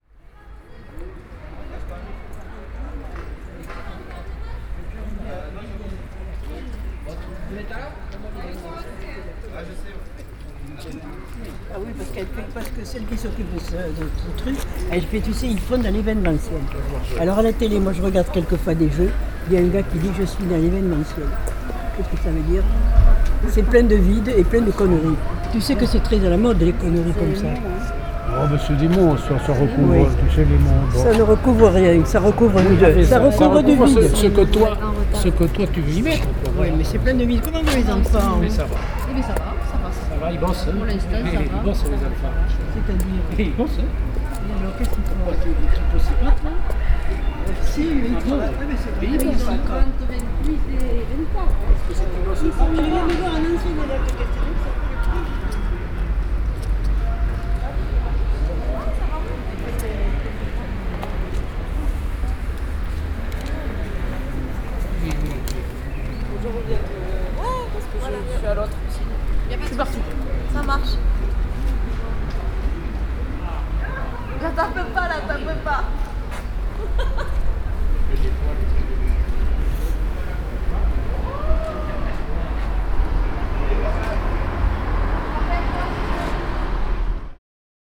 Cahors, Rue du President Wilson, College Gambetta.